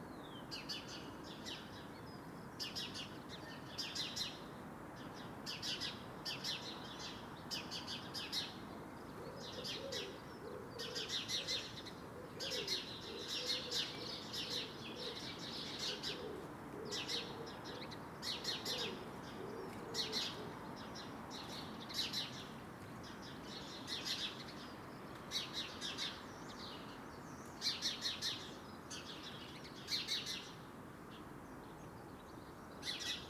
Contención Island Day 55 outer northeast - Walking to the sounds of Contención Island Day 55 Sunday February 28th
The Poplars Roseworth Avenue The Grove Moor Road North St Nicholas Avenue Rectory Grove Church Road Church Lane
Sparrow chatter
across small front gardens
behind low walls
Cars parked
on the south side of the lane
a lone walker passes by
Lost mortar below roof tiles
a sparrow flies to the hole
England, United Kingdom, 28 February 2021